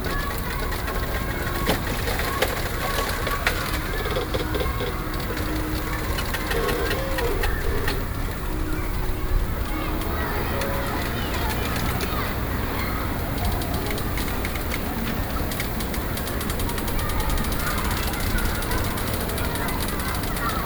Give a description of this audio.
Sound waving bamboo, In the Park, Distant sound of school, Zoom H4n+ Soundman OKM II